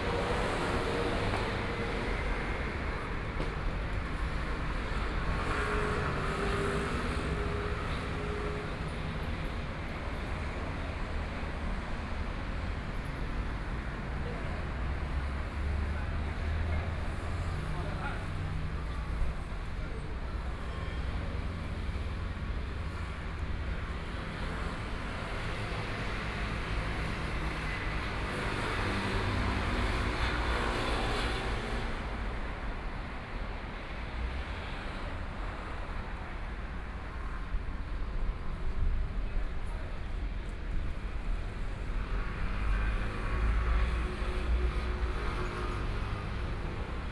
Gengsheng Rd., Taitung City - At the roadside
Traffic Sound, Tourists, Binaural recordings, Zoom H4n+ Soundman OKM II ( SoundMap2014016 -1)
16 January 2014, ~10:00